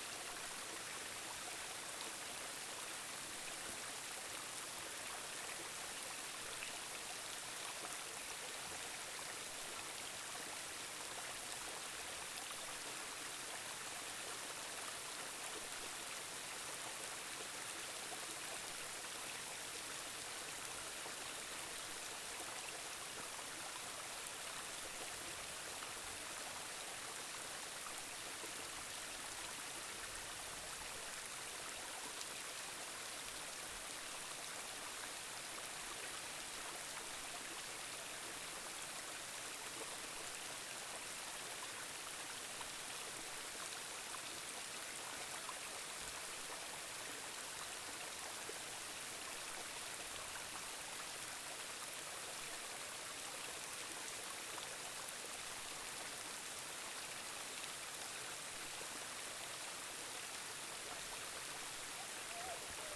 Aniseed Valley Road, Aniseed Valley, New Zealand - Waterfall
Water gently making its way down the rock-face to a small pool. Australian sheep dog in the background